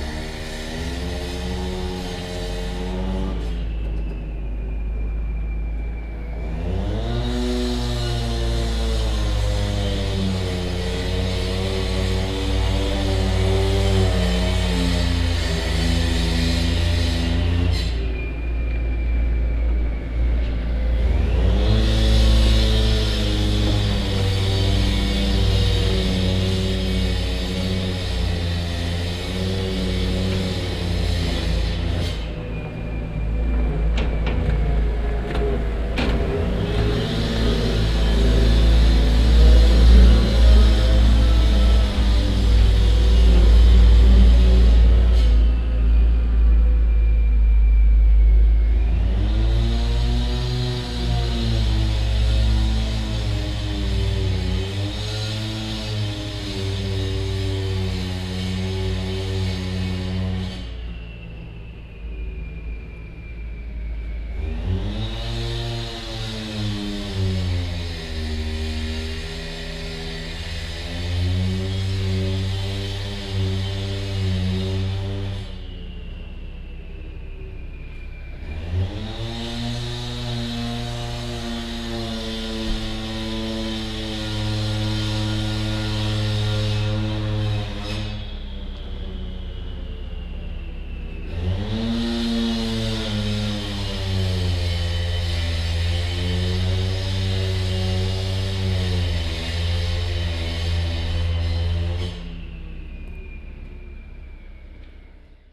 walsdorf, gaardewee, street construction
In the village at noon. The sound of a passing by construction wagon and other engines that are busy working on a new street surface.
Walsdorf, Gaardewee, Straßenarbeiten
Gegen Mittag im Dorf. Das Geräusch von einem vorbeifahrendem Baufahrzeug und andere Motoren, die fleißig an einem neuen Straßenbelag arbeiten.
Walsdorf, Gaardewee, travaux urbains
Midi au village. Le bruit d’un véhicule de travaux qui passe et d’autres engins occupés à réaliser un nouveau revêtement pour la chaussée.